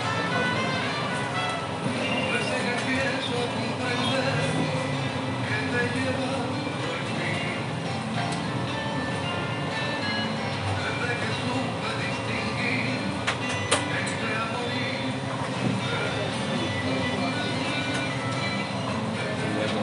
Cra., Itagüi, Antioquia, Colombia - Bus integrado Calatrava
Bus integrado metro Calatrava
Sonido tónico: Música, avisos publicitarios, conversaciones, motor
Señal sonora: Motos
Tatiana Flórez Ríos - Tatiana Martínez Ospino - Vanessa Zapata Zapata